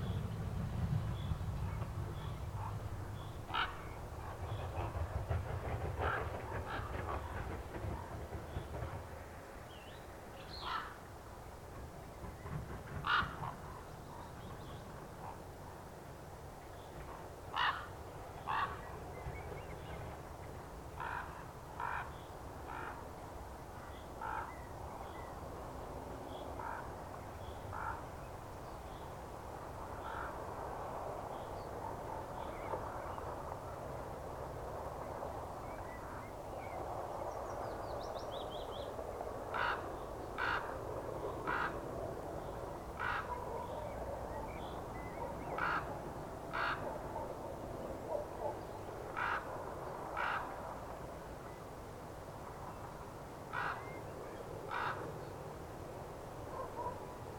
Dičiūnai, Lithuania, at sand quarry
Abandoned sand quarry. Soundscape.
Utenos apskritis, Lietuva, May 2022